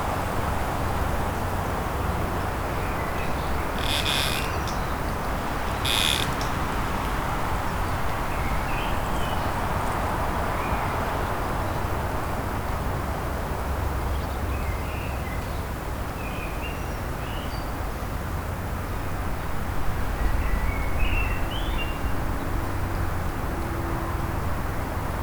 Nyker Strandvej, Rønne, Denmark - Wind in pin tree woods
Wind sounds on pine trees canopy and trunk bending. Distant traffic and lawnmower.
Vent au sommet des pins et tronc se pliant. Bruit de trafic lointain et une tondeuse à gazon.
12 May 2017